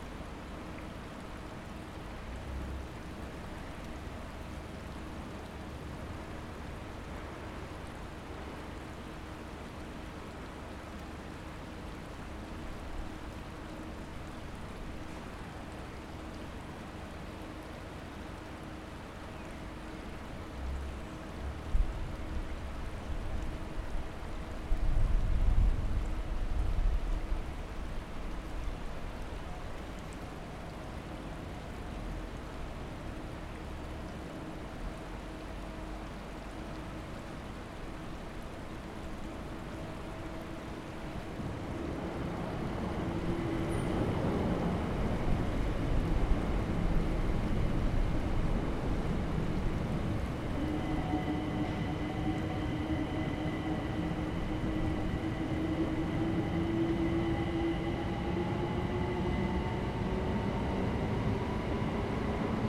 Unit, Fuel Tank, Creekside, London, UK - Deptford Creek
One of a series of sounds collected as part of an MA research project exploring phenomenological approaches to thinking about the aesthetics and stewardship of public space. Deptford Creek - a narrow, sheltered waterway; an inlet and offshoot of London's snaking River Thames - is one of the most biodiverse landscapes for its size in the city, and part of the dwindling 2% of Thames’ tidal river edges to remain natural and undeveloped – a crucial habitat for London’s at-risk wildlife.
England, United Kingdom, 29 October, 14:00